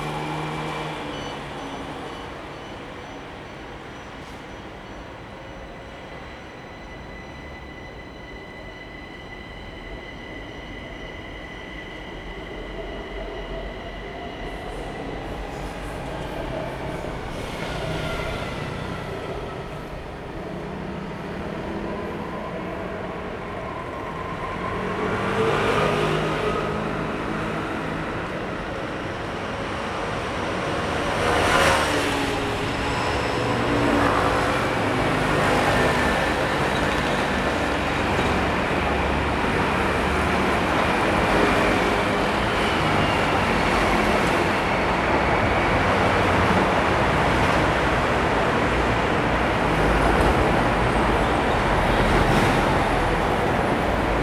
{
  "title": "Nanzih - Metropolitan Park Station",
  "date": "2012-03-29 15:30:00",
  "description": "Station exit, Traffic Noise, Sony ECM-MS907, Sony Hi-MD MZ-RH1",
  "latitude": "22.73",
  "longitude": "120.32",
  "altitude": "17",
  "timezone": "Asia/Taipei"
}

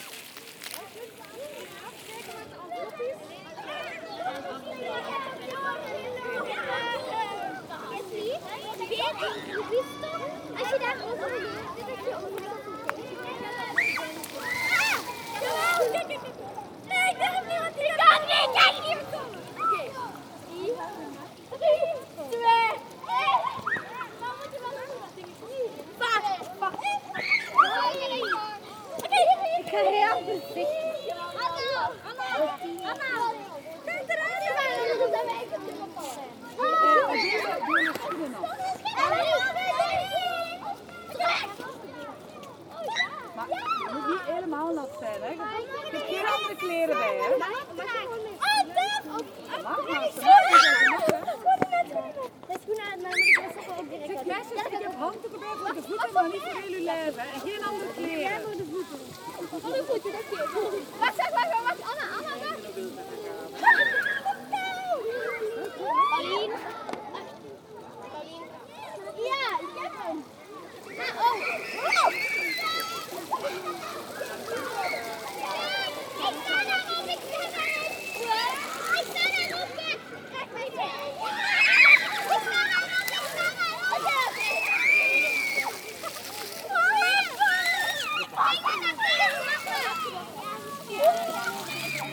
{
  "title": "Leuven, Belgique - Aleatory fountains",
  "date": "2018-10-13 15:30:00",
  "description": "A lot of children playing into aleatory fountains, they are wet and scream a lot !",
  "latitude": "50.88",
  "longitude": "4.71",
  "altitude": "37",
  "timezone": "Europe/Brussels"
}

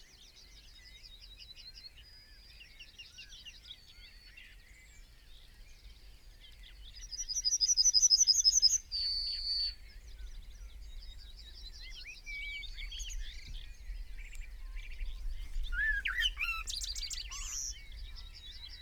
{
  "title": "Green Ln, Malton, UK - yellowhammer song and call ...",
  "date": "2019-06-24 04:43:00",
  "description": "yellowhammer song and call ... open lavalier mics clipped to bush ... bird song ... call ... from ... chaffinch ... dunnock ... wren ... pheasant ... blackbird ... song thrush ... crow ... whitethroat ... background noise ...",
  "latitude": "54.12",
  "longitude": "-0.54",
  "altitude": "83",
  "timezone": "Europe/London"
}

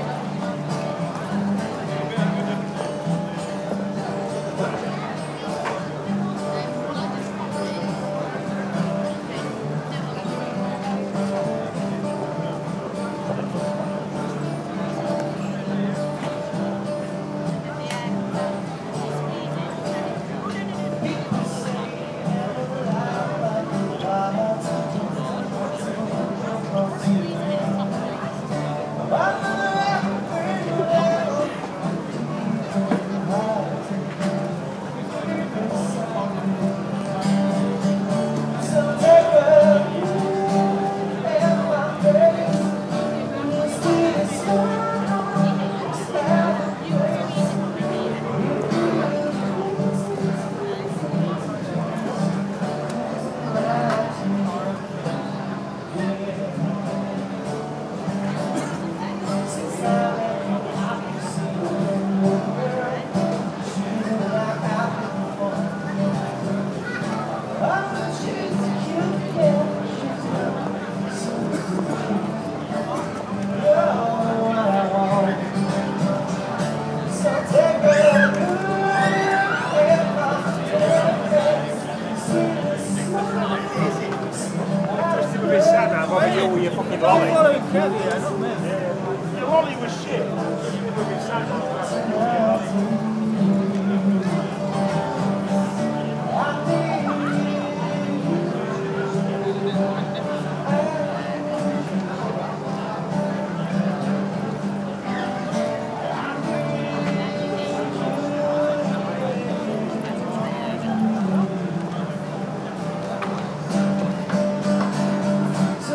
{
  "date": "2010-09-09 17:18:00",
  "description": "Singer, guitar, people, markets, food",
  "latitude": "53.48",
  "longitude": "-2.25",
  "altitude": "51",
  "timezone": "Europe/London"
}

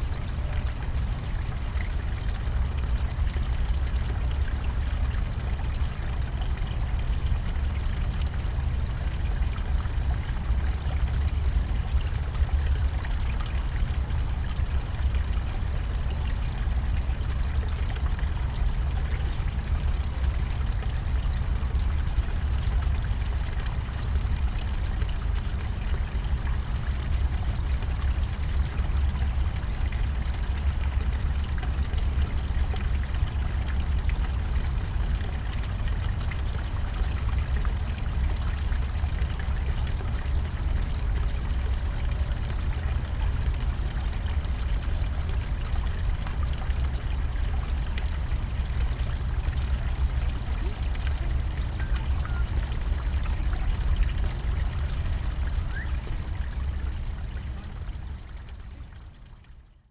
{
  "title": "Tivoli park, Ljubljana - fountain, water flow",
  "date": "2012-11-08 11:30:00",
  "description": "small water stream flows through a basin, probably coming from the Rožnik hill rising behind the park.\n(Sony PCM D50, DPA4060)",
  "latitude": "46.05",
  "longitude": "14.49",
  "altitude": "318",
  "timezone": "Europe/Ljubljana"
}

